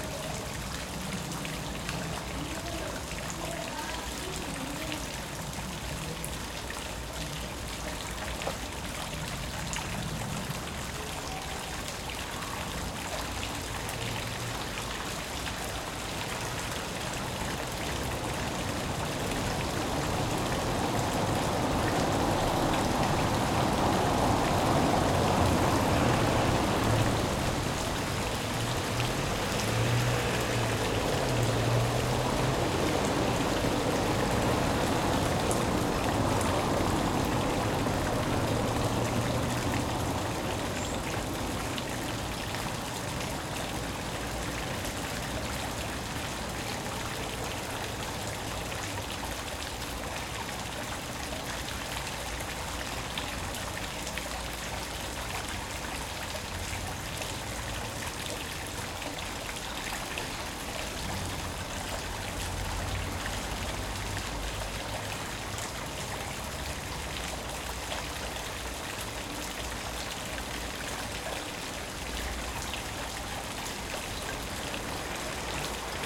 Artesian well - Artesian Well
A artesian Well in the Heart of Ulm. Recorded with a tascam dr680 und a nt4